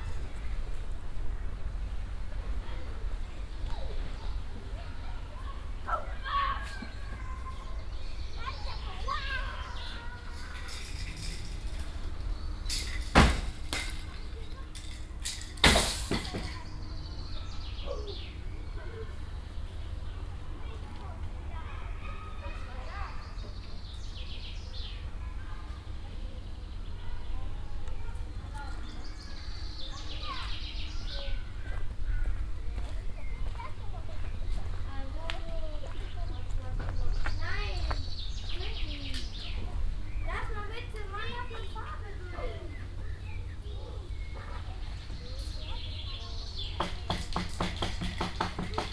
cologne, friedenspark, construction playground
soundmap nrw: social ambiences/ listen to the people in & outdoor topographic field recordings